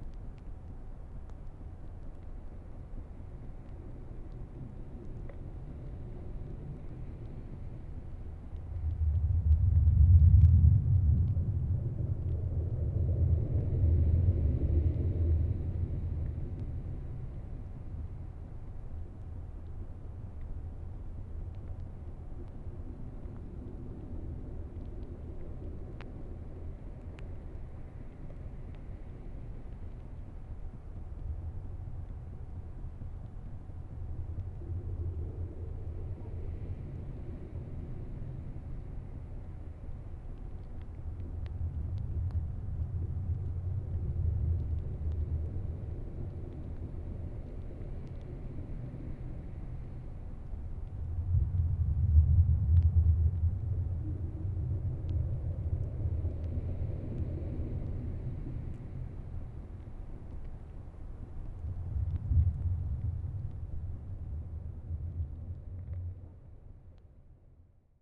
南田二號橋, Nantian, Daren Township - Stone area
On the coast, Stone area, Place the contact microphone in the stone crevice
Zoom H6+ contact mic
Taitung County, Taiwan, 23 April 2018